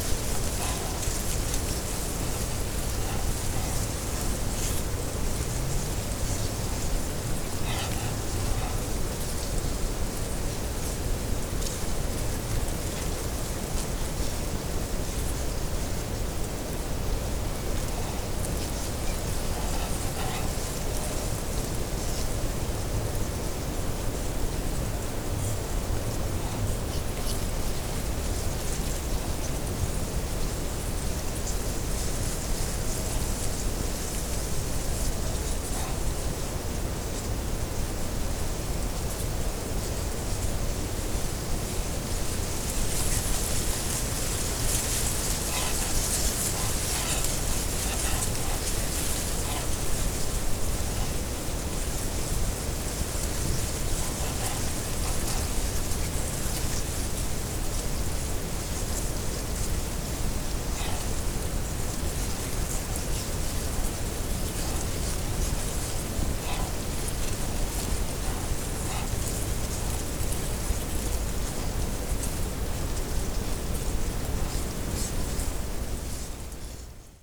Lithuania, Utena, reed in wind
on the frozen marsh. I placed the mic amongst the whispering reed
7 March 2011, 16:10